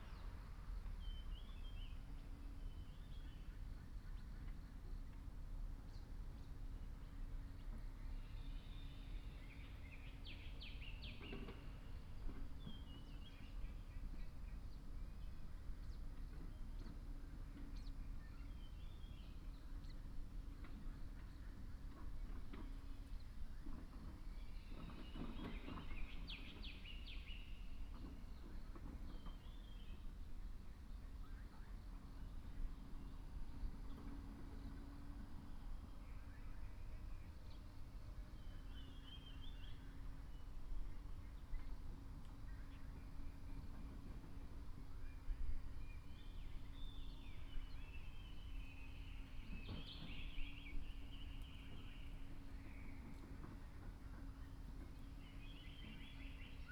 in the wetlands, Bird sounds, Construction Sound
Puli Township, Nantou County, Taiwan